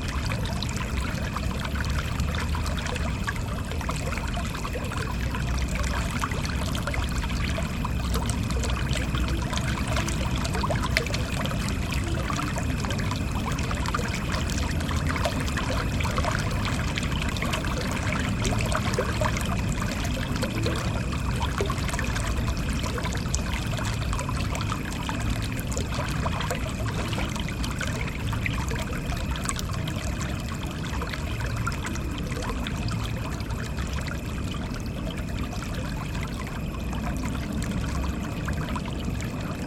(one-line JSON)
{"title": "Libeňský ostrov", "description": "night soundscape from the Island in Libeň, September, one of the last warm days", "latitude": "50.11", "longitude": "14.46", "altitude": "185", "timezone": "Europe/Berlin"}